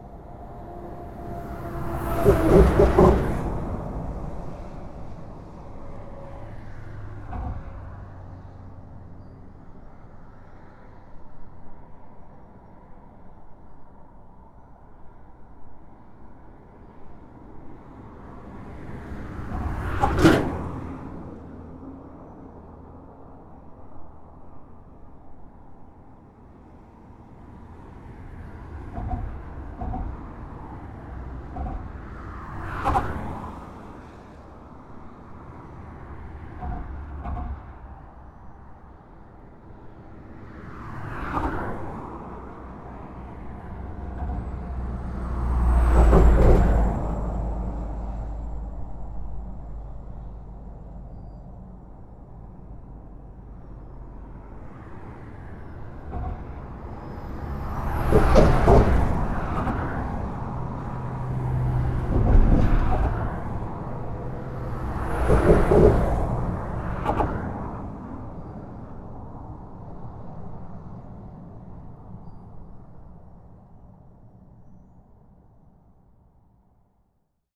La Rivière-Saint-Sauveur, France - Normandie bridge
The Normandie bridge is an enormous structure above the Seine river. This is a recording of the expansion joint, this time outside the bridge. Ambiance is very violent.